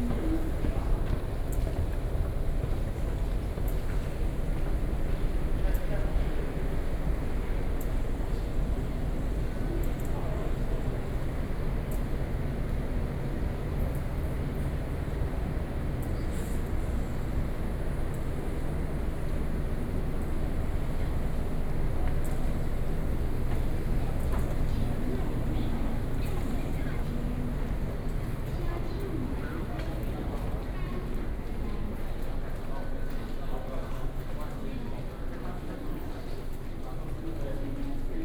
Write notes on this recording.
walking into the MRT Station, Sony PCM D50 + Soundman OKM II